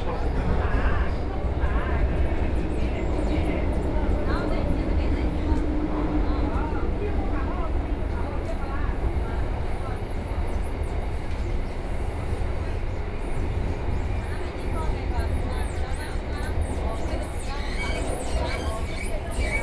from South Shaanxi Road Station to Laoximen Station, Binaural recording, Zoom H6+ Soundman OKM II
Huangpu, Shanghai, China, 3 December 2013